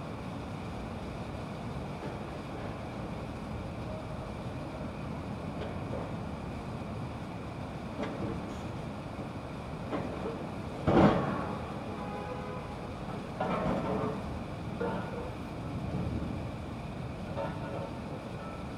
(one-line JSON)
{"title": "대한민국 서울특별시 서초구 반포동 1-11 - Construction Yard, Machine Growl", "date": "2019-08-11 16:45:00", "description": "construction yard, machine growl in distance\n공사장, 원거리 철거 소음", "latitude": "37.51", "longitude": "127.00", "altitude": "18", "timezone": "Asia/Seoul"}